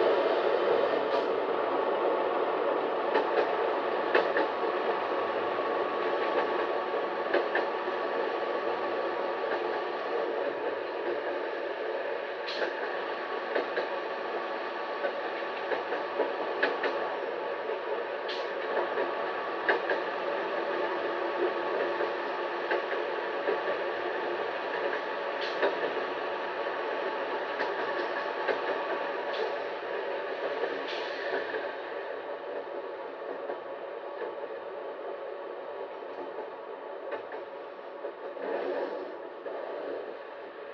The recording was made on the train between Benevento and Avelino, a rail line that was shut down in October 2012.
This recording was made with contact mics.